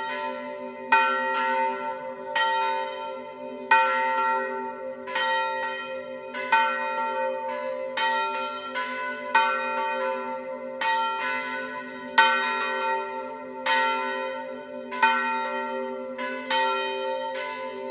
SBG, Camí de Vilanova - Festa Major, Repic de Campanes
Tradicional repique de campanas previo a la misa en el primer día de la fiesta mayor.